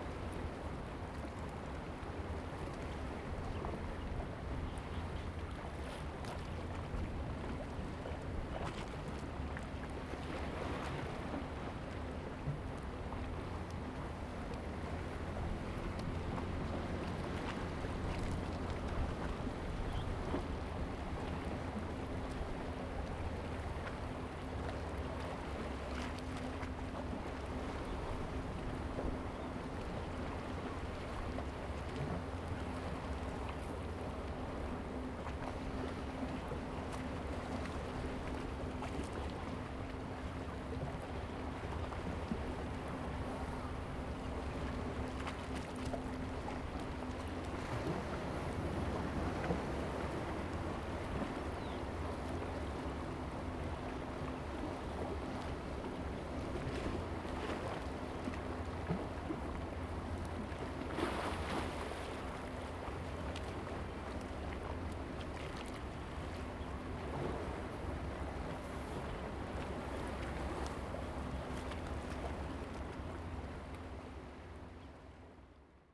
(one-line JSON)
{"title": "漁福漁港, Hsiao Liouciou Island - Small pier", "date": "2014-11-01 16:15:00", "description": "Waves and tides, Small pier\nZoom H2n MS +XY", "latitude": "22.35", "longitude": "120.39", "timezone": "Asia/Taipei"}